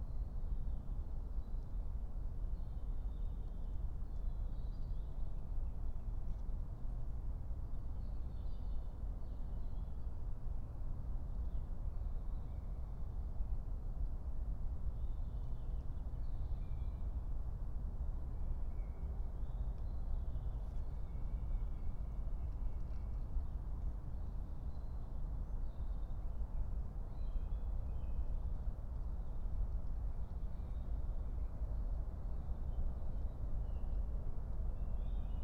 05:00 Berlin, Königsheide, Teich - pond ambience